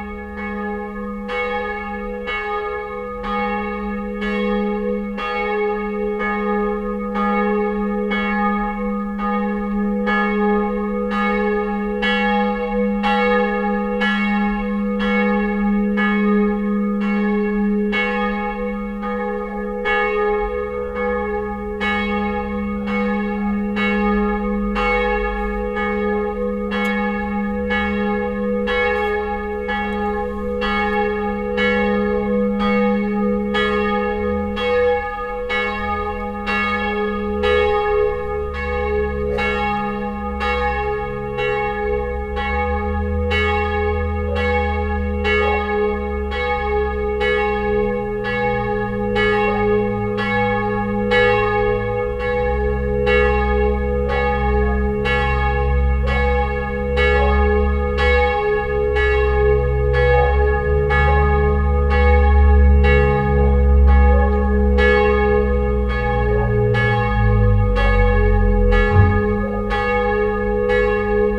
hupperdange, church, bells
A second recording of the church bells. This time the full set calling for mass.
Hupperdange, Kirche, Glocken
Eine zweite Aufnahme der Kirchenglocken. Dieses Mal alle Glocken, die zur Messe rufen.
Hupperdange, église, cloches
Un deuxième enregistrement des cloches de l’église. Cette fois le carillon complet qui invite pour la messe
Project - Klangraum Our - topographic field recordings, sound objects and social ambiences